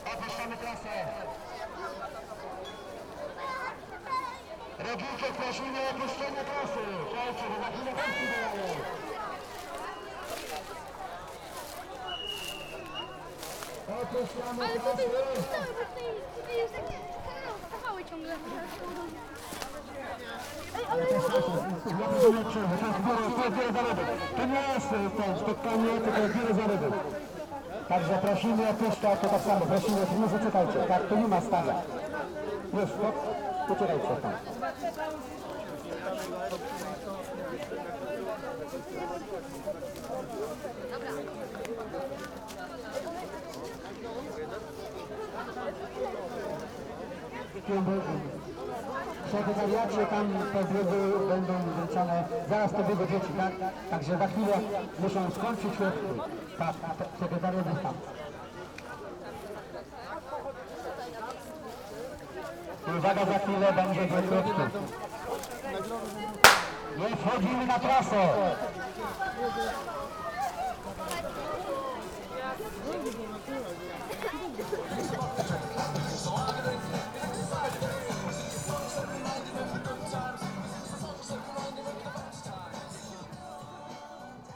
Poznan, Rusalka lake - children marathon
a children's running event. supporters and parents cheering the runners. moving towards the start line where boys run is about to begin. announcer nervously talking through a boom box. (sony d50)
Poznań, Poland